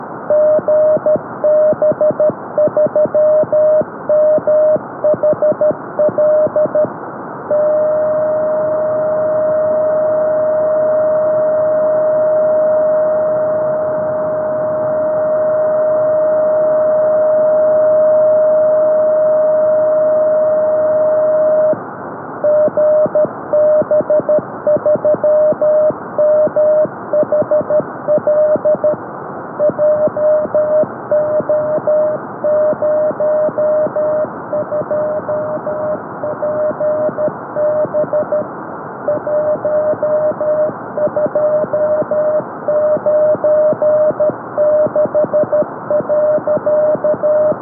GB3MHL 23cm Beacon
Off air recording of the GB3MHL beacon on 1296.830MHz as received at the station of Dave (G0DJA) in Bolsover, UK (IO93if)